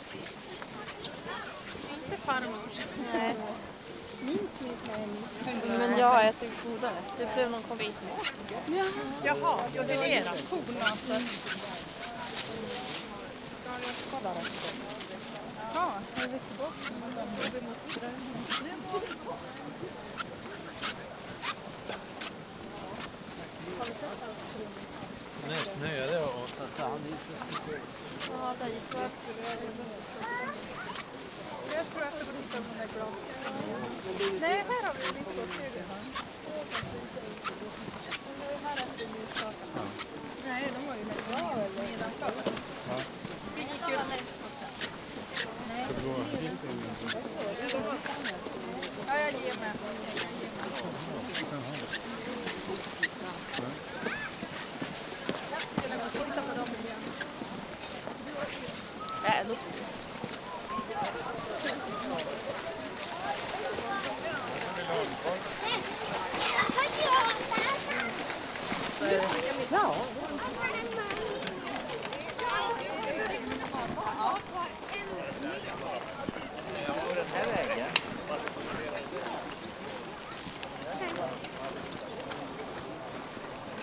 Gammlia, Umeå, Winter Fayre
Soundwalk through the stalls at the winter fayre, horse-drawn cart with bells, children, people greeting, brushing winter clothing. Temperature -11 degrees